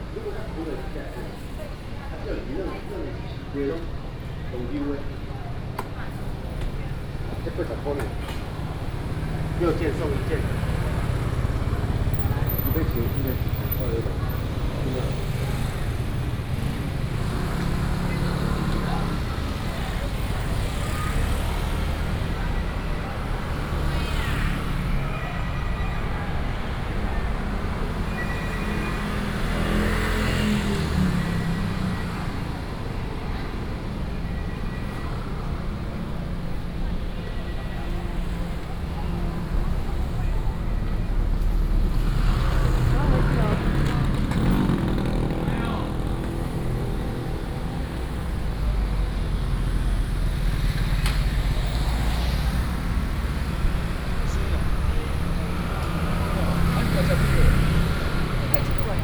Kailan Rd., Toucheng Township - soundwalk
Walking through the streets in different, Traditional market town, Very hot weather, Traffic Sound
7 July, ~10:00